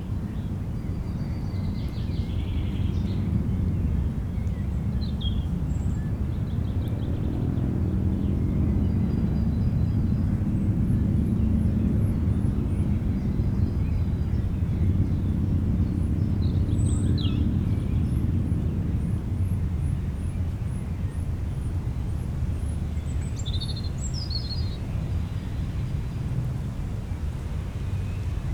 burg/wupper, westhausener straße: wald - the city, the country & me: forest
long grass rustling in the wind, birds, sound of cars and motorcycles from the nearby road
the city, the country & me: may 8, 2011